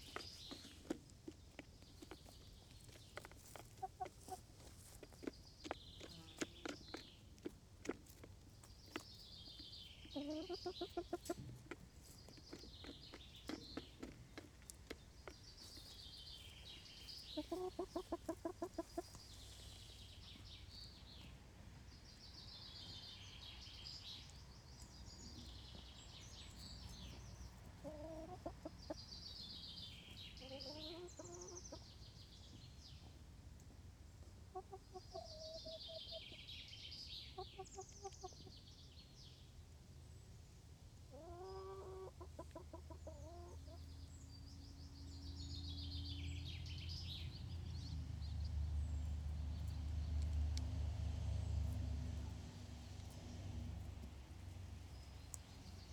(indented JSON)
{
  "title": "Laren, Nederland - Henhouse",
  "date": "2015-07-18 10:15:00",
  "description": "Chickens making little noises while eating.\nInternal mics of the Zoom H2",
  "latitude": "52.19",
  "longitude": "6.36",
  "altitude": "11",
  "timezone": "Europe/Amsterdam"
}